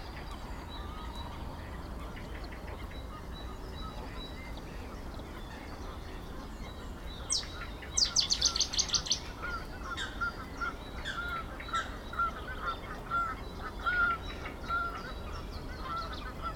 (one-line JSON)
{"title": "Huldenberg, Belgium - Grootbroek swamp", "date": "2018-03-29 06:25:00", "description": "Grootbroek is a swamp and a pond, located in Sint-Agatha-Rode and Sint-Joris-Weert. I immediately thought that the place must be charming : all that life abounding in the swamps ! I was wrong. Indeed many birds were present on the pond, but another kind of bird was there : the plane. This place is absolutely drowned by the Zaventem takeoffs. I went there very early on the morning only for Grootbroek. I said to myself : do I leave immediately ? No, I must talk about this horror, the great nature and the carnage airport. Ornithologists have to know it, the main volatile here is the plane. Sounds on the pond : Canada geese, Mallard ducks, Kingfisher, Waterfowl, Common Moorhen, Eurasian Coot, Mute swans. On the woods : Common Chiffchaff, Common Chaffinch, Common Blackbird. There's an unknown bird, very near each time, probably a Meadow Pipit.", "latitude": "50.79", "longitude": "4.64", "altitude": "29", "timezone": "Europe/Brussels"}